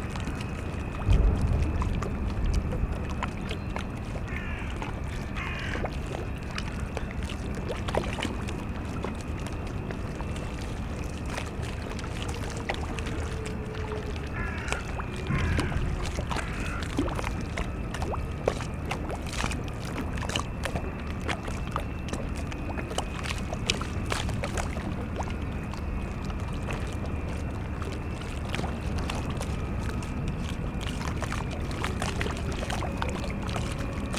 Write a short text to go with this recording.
lapping waves of the spree river, squeaking drone of the ferris wheel of the abandonned fun fair in the spree park, distant sounds from the power station klingenberg, towboat enters the port of klingenberg power station, the city, the country & me: february 8, 2014